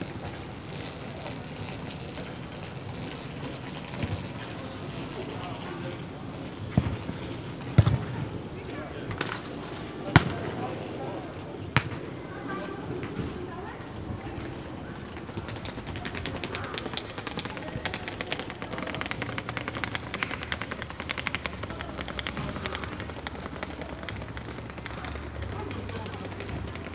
Poznan, Jana III Sobieskiego housing estate - afternoon match
an amateur soccer match played on a community field a warm Saturday afternoon. players taking a brake and then resuming the game.
1 March 2014, 12:15